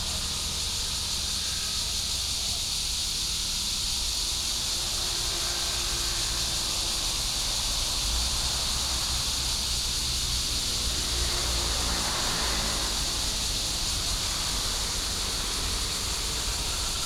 Ln., Sec. Jiulong, Zhongxing Rd., Longtan Dist. - Cicadas and Traffic sound
Cicadas and Traffic sound, Birds sound